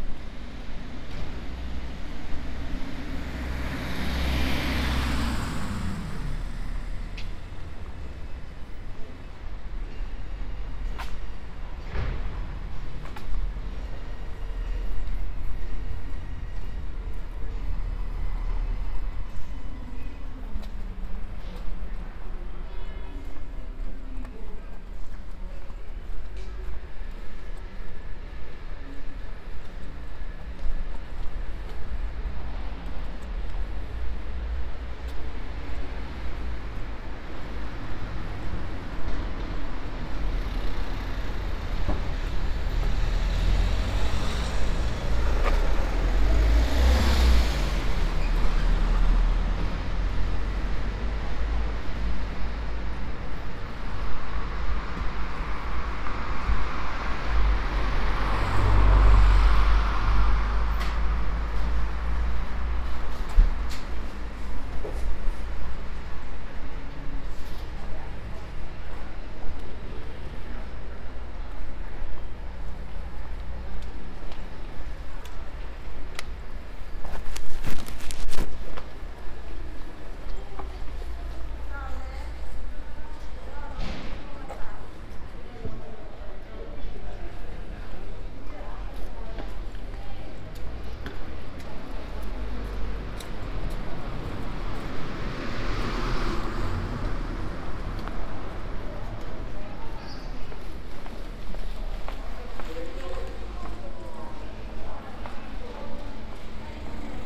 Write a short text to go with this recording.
“Outdoor market in the square at the time of covid19” Soundwalk, Chapter LIV of Ascolto il tuo cuore, città. I listen to your heart, city. Thursday April 23rd 2020. Shopping in the open air square market at Piazza Madama Cristina, district of San Salvario, Turin, fifty four days after emergency disposition due to the epidemic of COVID19. Start at 11:27 a.m., end at h. 11:59 a.m. duration of recording 22’10”, The entire path is associated with a synchronized GPS track recorded in the (kml, gpx, kmz) files downloadable here: